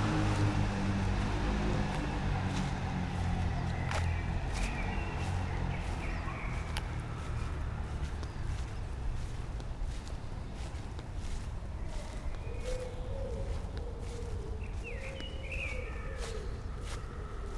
Sophienstraße, Berlin, Germany - walk around small park
walk between trees and around church, church bells, raindrops, sandy and grass paths, birds, traffic
Deutschland, European Union, 17 May 2013, 19:58